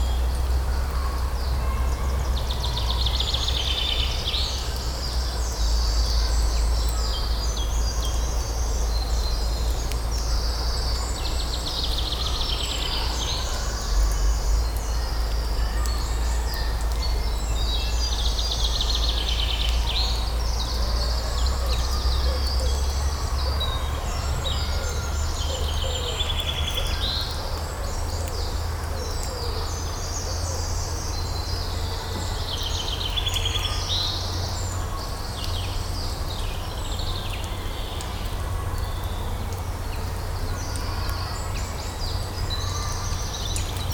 Court-St.-Étienne, Belgique - In the pines
Into the forest, wind sound in the pines needles and birds singing during spring time.